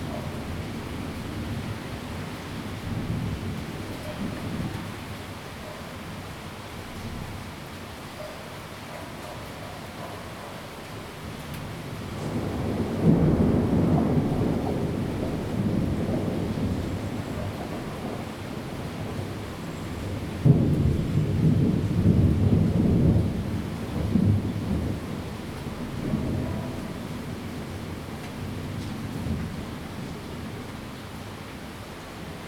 Rende 2nd Rd., Bade Dist. - thunder
Thunderstorms
Zoom H2n MS+XY+ Spatial audio